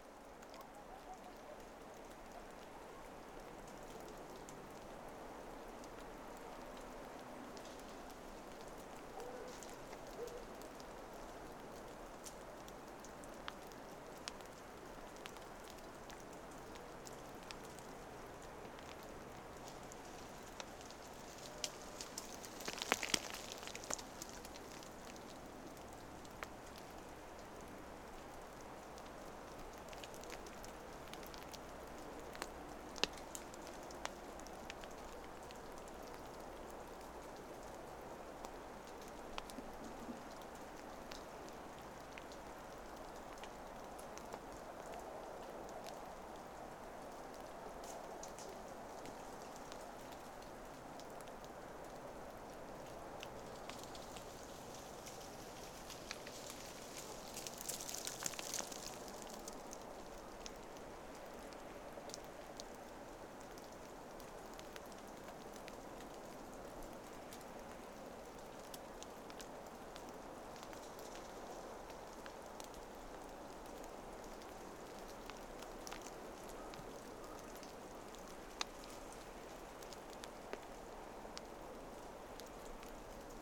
Rgere was heavy snowing through the last night. The trees are covered in snow...
Utenos rajono savivaldybė, Utenos apskritis, Lietuva, 27 January, ~17:00